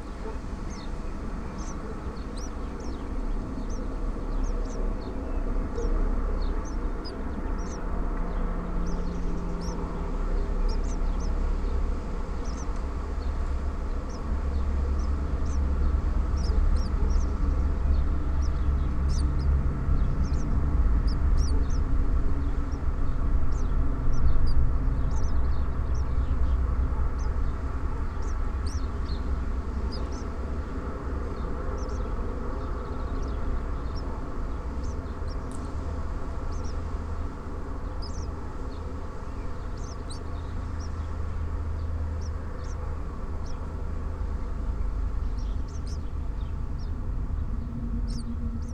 Chania 731 00, Crete, birds nest in wall
there are several holes with birds nests in a concrete wall of a tunnel...